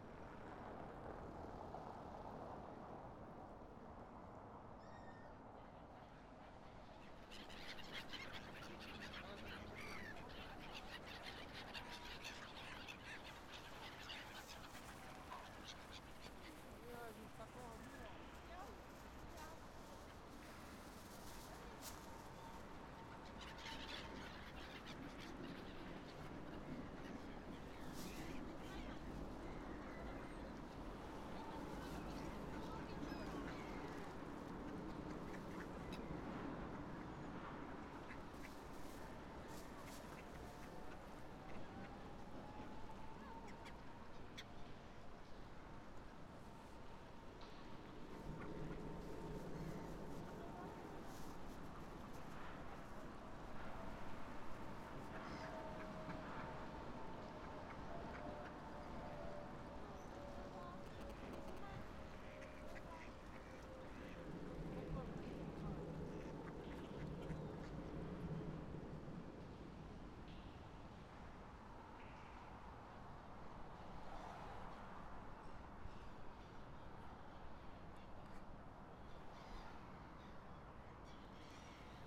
soundscape under railway bridge where Botic stream enters Vltava river